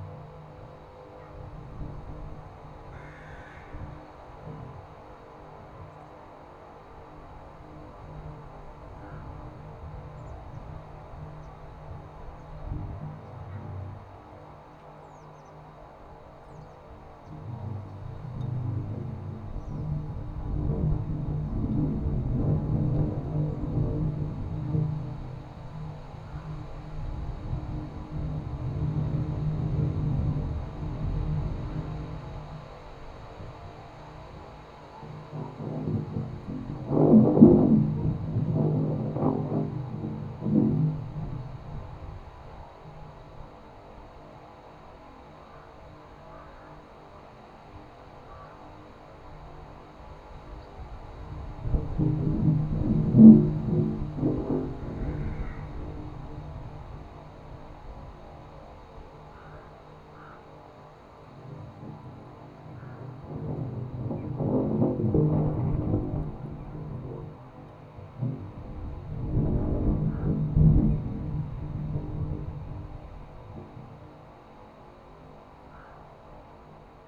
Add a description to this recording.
small mikrophones in the metallic horizontal tube. plays of winds